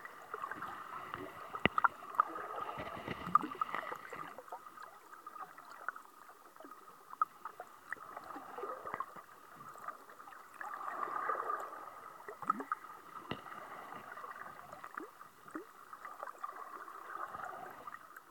Shetland Islands, UK, Boddam, Dunrossness - Under the water, just off the rocky shoreline around Boddam, Dunrossness
This is the sound under the water near a field full of grazing sheep, in Shetland. The recording was made with one of Jez Riley French's hydrophones plugged into a FOSTEX FR-2LE. You can hear the activity of the tide, and some of the scraping noises as the same tide pushes the hydrophone against the rocks. I don't know if the nearby sheep are grown for wool or for meat, but their proximity to the sea was interesting to me, as on mainland Britain I have rarely seen sheep so near to the ocean.
1 August 2013